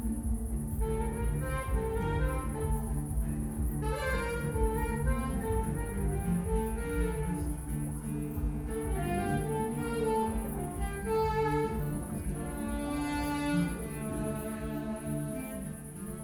Kienitz, Letschin, Kirchencafe - concert, competing sounds
Kienitz, at the river Oder, Oderbruch, Kirchencafe, a nice open air location for concerts and events, competing sounds from a jazz concert (by Manfred Sperling, Papasax and Nikolas Fahy) and the nearby harbour festivities
(Sony PCM D50, DPA4060)